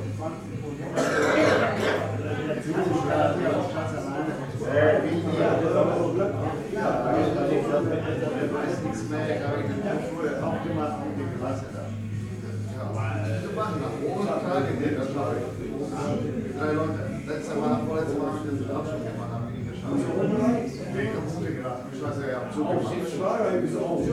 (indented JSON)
{
  "title": "haus pinninghoff - gaststätte haus pinninghoff",
  "date": "2010-01-16 20:59:00",
  "description": "gaststätte haus pinninghoff, hamm-isenbeck",
  "latitude": "51.67",
  "longitude": "7.79",
  "altitude": "65",
  "timezone": "Europe/Berlin"
}